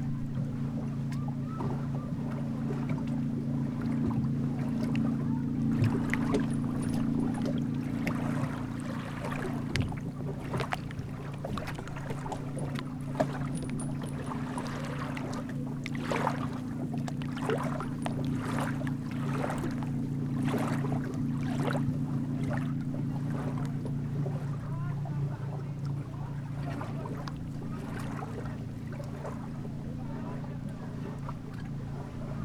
Molėtai, Lithuania, lake Bebrusai, boats
boats swaying at the shore of the lake...